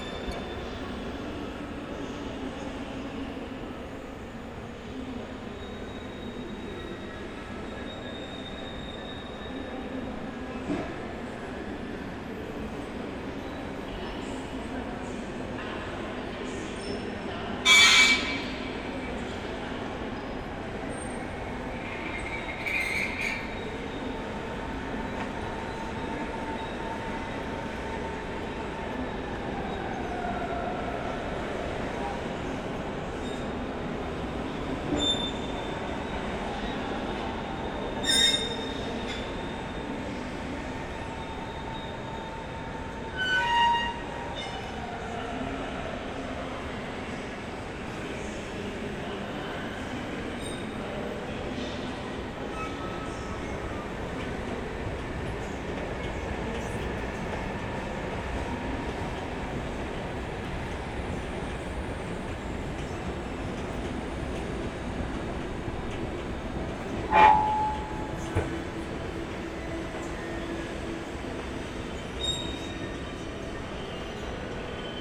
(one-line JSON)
{"title": "Hauptbahnhof Berlin - station walking, strolling around", "date": "2022-02-22 22:22:00", "description": "Berlin Hauptbahnhof, main station, Tuesday later evening, strolling around through all layers, listening to trains of all sort, engines, people, squeaky escalators and atmospheres.\n(SD702, Audio Technica BP4025)", "latitude": "52.52", "longitude": "13.37", "altitude": "27", "timezone": "Europe/Berlin"}